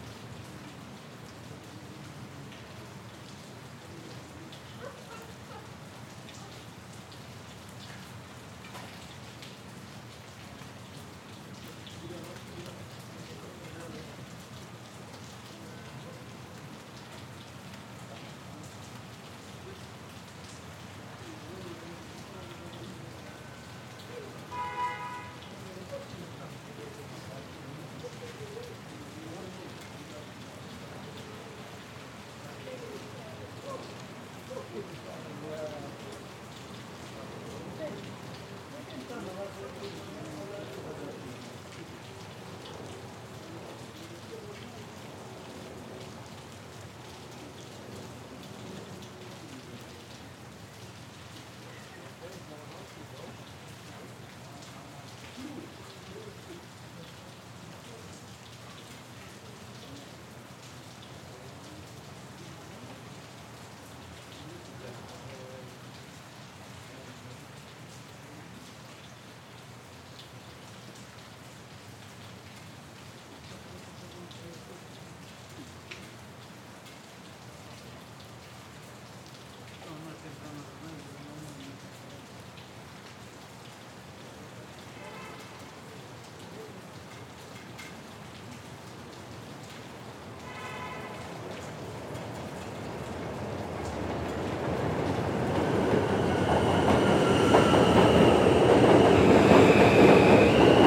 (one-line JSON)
{"title": "Madison St, Flushing, NY, USA - M Train elevated station on Forest Ave", "date": "2022-03-10 10:30:00", "description": "Sounds of rain at the M Train elevated station on Forest Avenue.", "latitude": "40.70", "longitude": "-73.90", "altitude": "29", "timezone": "America/New_York"}